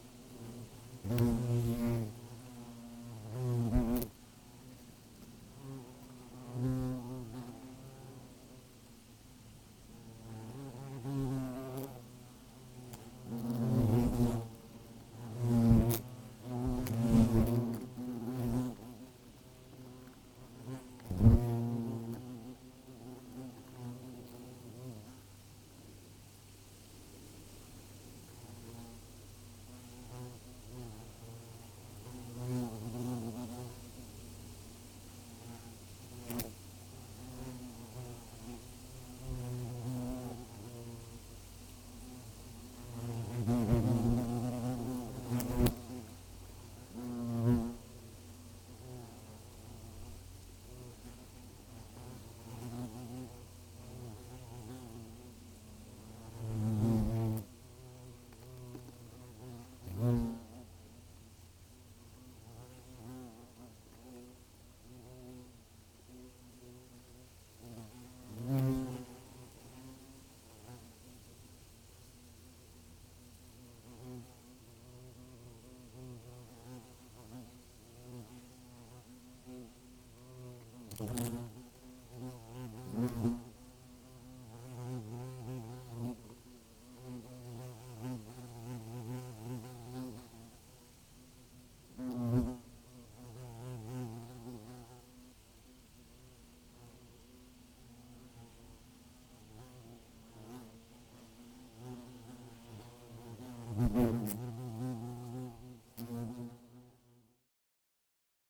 Jasonys, Lithuania, europeam hornets
the nest of european hornets in a tree. recorded with sennheiser ambeo headset for I had no proper mics with me...
4 September, 18:30